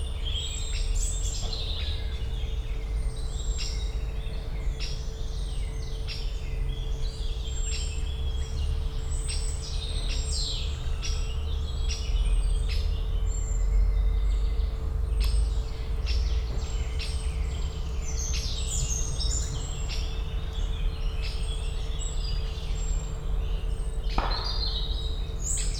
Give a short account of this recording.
spring ambience in Park Pszczelnik, Siemianowice, distant construction work noise, an aircraft appears and creates a Doppler sound effect with a long descending tone, (Sony PCM D50, DPA4060)